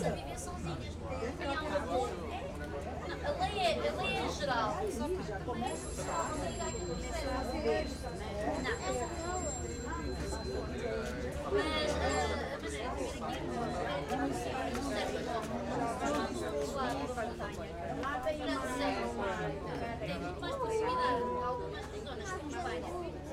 Largo da Estação, Pinhão, Portugal - Estação, Pinhão, Portugal
Estação, Pinhão, Portugal Mapa Sonoro do Rio Douro Railway Station, Pinhao, Portugal Douro River Sound Map